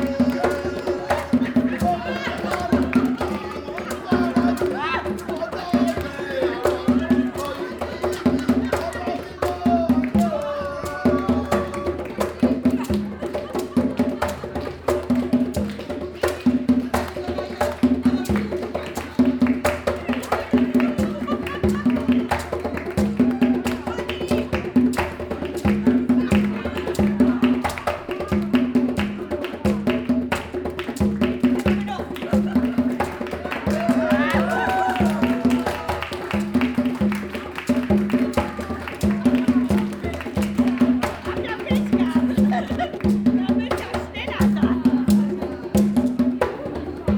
We are with many people set for a celebratory dinner in a large hall. It’s the end-of-year thank-you-dinner for all the honorary helpers of the “Humanitas” project & shop. The Nigerian artist and musician Yemi Ojo and his son Leon set out to entertain the guests with some Yoruba music. Yemi explains that the song they are performing here is singing praises to God Almighty “O Yigi Yigi”, a Yoruba version of “Grosser God wir loben dich”, if you want… Yemi and the beat of the Yoruba praise song gets the listeners involved, dran in and finally, on their feet…
Links:
Hamm, Germany, 11 November 2011, 20:17